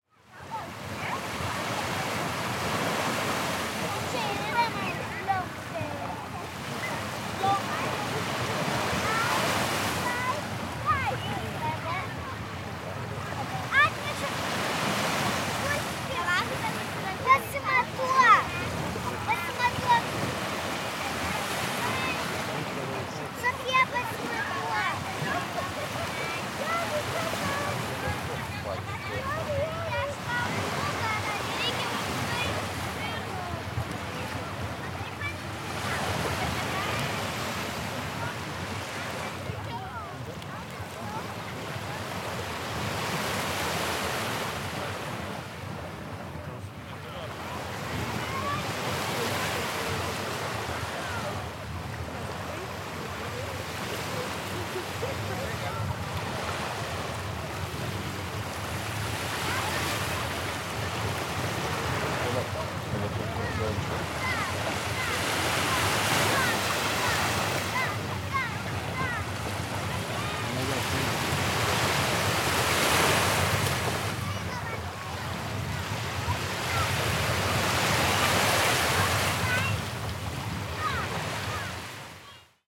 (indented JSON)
{
  "title": "Neringos sav., Lithuania - The beach",
  "date": "2016-07-25 17:36:00",
  "description": "Recordist: Saso Puckovski\nDescription: Sunny day at the beach. Waves, children playing, wind. Recorded with ZOOM H2N Handy Recorder.",
  "latitude": "55.31",
  "longitude": "20.98",
  "altitude": "7",
  "timezone": "Europe/Vilnius"
}